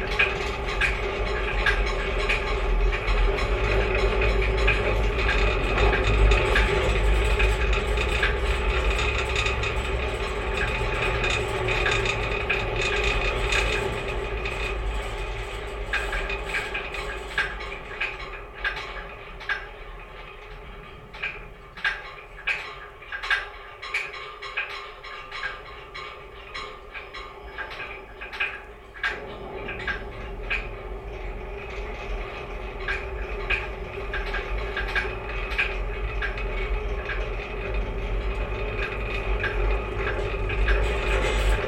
guide wires of the small pedestrian suspension bridge near the park
Calgary, AB, Canada